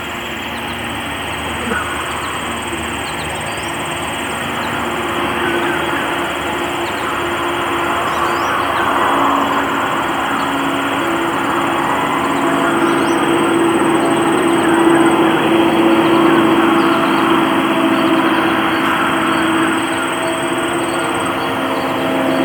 The Funny Farm, Meaford, ON, Canada - Octet - outdoor sound installation
Eight SM58 microphones in a tree, wired as tiny loudspeakers. Sound materials are birdsongs recorded in Ontario in 1951-52 by William WH Gunn. Zoom H2n with post EQ + volume tweaks.
26 August 2016